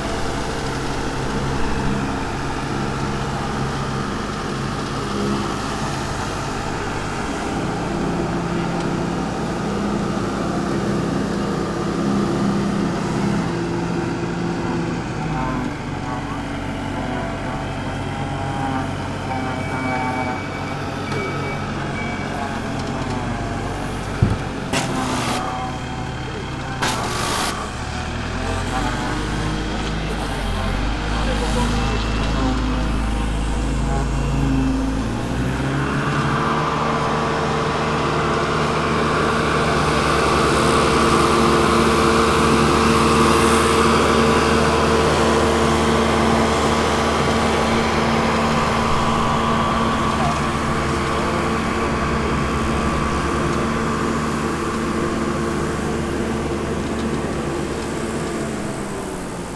shipyard, novigrad - bicycle, pressure washers, water